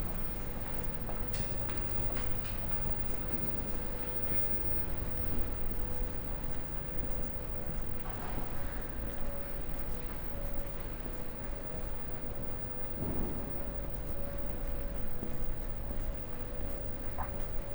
alleecenter hamm - walk-through to West entrance
walking from upstairs, down the stairs of the escalator, along the closed shops to the West entrance doors and out…